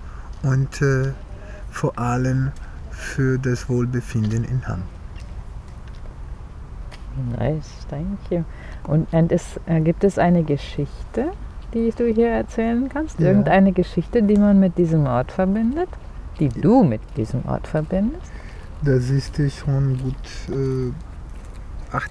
Kurpark Bad Hamm, Hamm, Germany - A romantic place...
Marcos takes us to his favourite place in Hamm… we are in the “Kurpark” of the spa “Bad Hamm”, sitting at a bench at the end of the pond, listening to the sounds around us… the people here, says Marcos are walking quietly and more slowly than elsewhere as if they were at a sacred place… and he adds a story, that once he has listened here at this place to familiar sounds from home…
Marcos führt uns an seinen liebsten Ort in der Stadt… im Kurpark von “Bad Hamm”, am Ende des Teiches… und er erzählt uns, dass er einmal hier ungewöhlichen und bekannten Klängen zugehört hat….